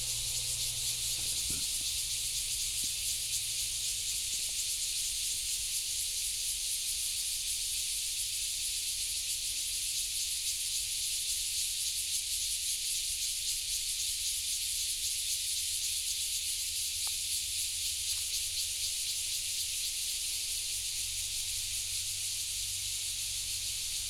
瑞豐村, Luye Township - Cicadas sound
In the woods, Cicadas sound, Traffic Sound
Taitung County, Taiwan, 7 September, ~10:00